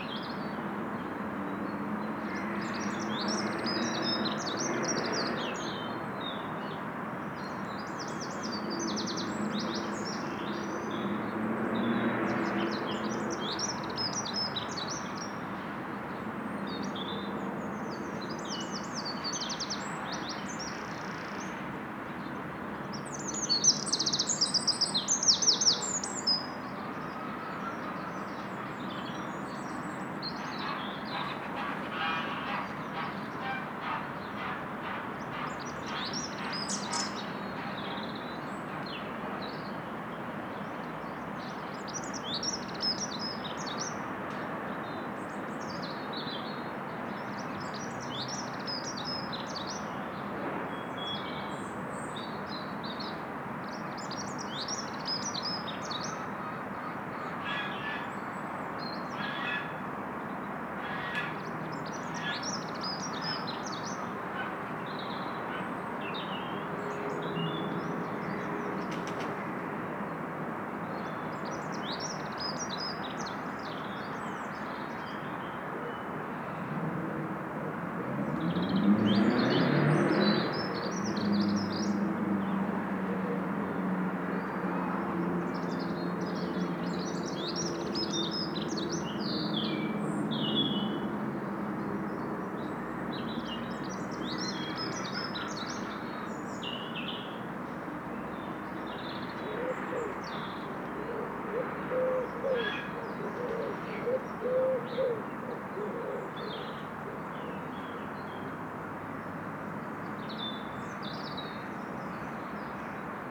Love Ln, Penryn, UK - Raven and early morning sounds in the Graveyard in Love Lane
A recording made across the valley from the main road into Falmouth from Penryn, so hence road noise in the background but recorded in a graveyard that led down to the estuary. There are the sounds of some captive geese and hens along with Wrens, Robins Rooks and rather nicely a Raven, who came and sat in a tree just to the left and above my microphones. Sony M10 with two Sennheiser ME62 Omni mics with an Olsen Wing.
21 March 2018